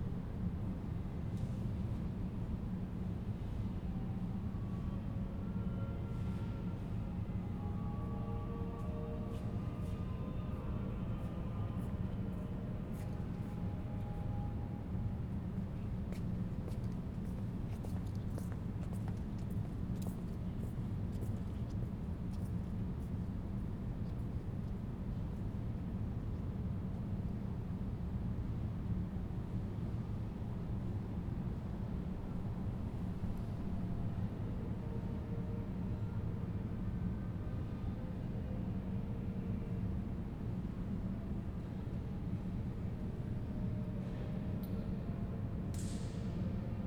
Sint-Romboutskathedraal, Mechelen, België - Sint-Romboutskathedraal
[Zoom H4n Pro] Inside the St. Rombouts cathedral during visiting hours. Music playing, hot air blowing, visitors passing by.
Mechelen, Belgium